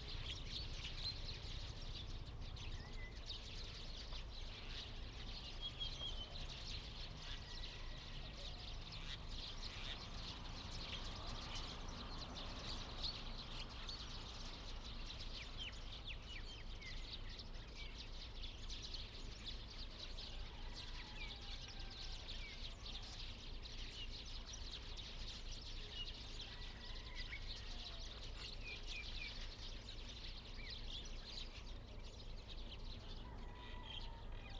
{"title": "北寮村, Huxi Township - Birds singing", "date": "2014-10-21 15:44:00", "description": "Birds singing, Chicken sounds\nZoom H2n MS+XY", "latitude": "23.60", "longitude": "119.67", "altitude": "7", "timezone": "Asia/Taipei"}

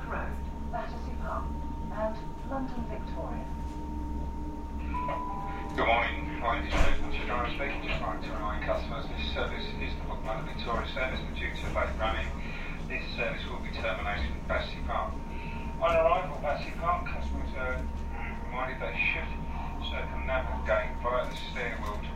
{"title": "Docklands Light Railway - Train through South Bermondsey Station", "date": "2010-10-09 10:00:00", "description": "Travelling on the Docklands Light Railway through South Bermondsey Station and announcement of need to change trains.", "latitude": "51.49", "longitude": "-0.05", "altitude": "6", "timezone": "Europe/Berlin"}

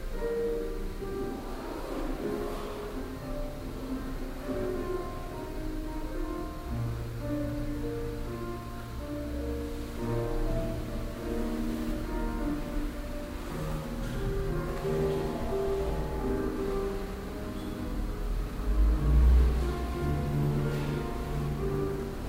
{"title": "heiligenhaus, musikschule", "date": "2008-04-19 10:45:00", "description": "abends in der musikschule, gang durch das gebäude begleitet von verschiedenen musikalischen etitüden und schritten und dem knarzen des flurbodens\nproject: :resonanzen - neanderland soundmap nrw: social ambiences/ listen to the people - in & outdoor nearfield recordings", "latitude": "51.32", "longitude": "6.97", "altitude": "178", "timezone": "Europe/Berlin"}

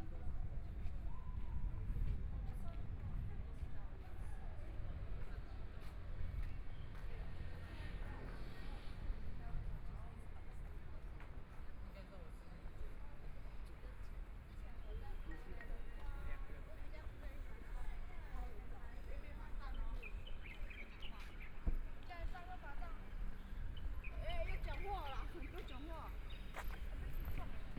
{"title": "Fuyang Rd., Hualien City - In the Street", "date": "2014-02-24 17:17:00", "description": "walking In the Street, Traffic Sound, sound of the Excavator traveling through\nPlease turn up the volume\nBinaural recordings, Zoom H4n+ Soundman OKM II", "latitude": "24.00", "longitude": "121.60", "timezone": "Asia/Taipei"}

